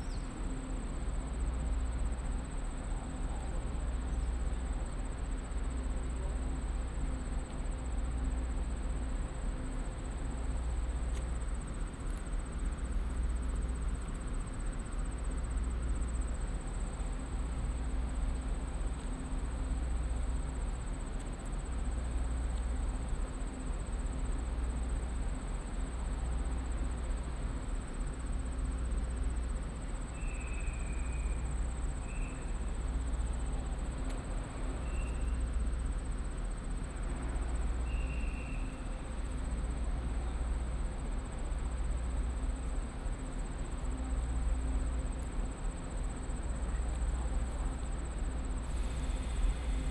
Ruifang Station, 瑞芳區, New Taipei City - In the train station platform
In the train station platform, Traveling by train, Train arrival platform
Sony PCM D50+ Soundman OKM II
5 June 2012, ~18:00